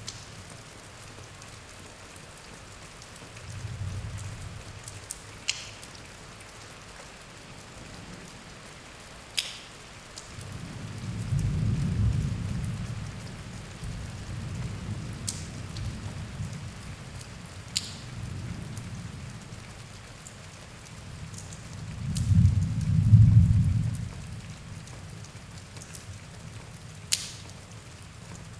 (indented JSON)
{
  "title": "Storm from inside barn, Mooste Estonia",
  "date": "2012-07-29 19:35:00",
  "description": "sound reflections from the storm outside",
  "latitude": "58.16",
  "longitude": "27.19",
  "altitude": "43",
  "timezone": "Europe/Tallinn"
}